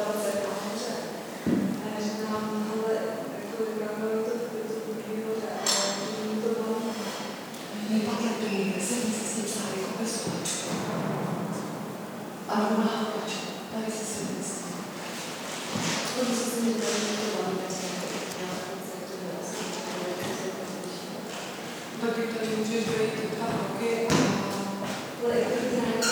kutna hora - gask coffee
coffee place, gask (central bohemian state gallery), kutna hora, visual arts